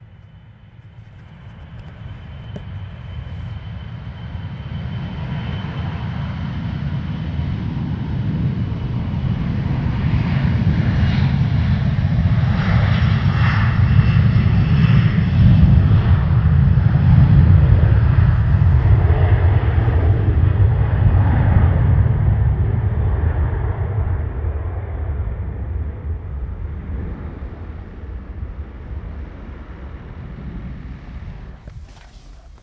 Kastrup, Danmark - Plane taking off
Recorded at "Flyvergrillen" in December 2011 with a Zoom H2.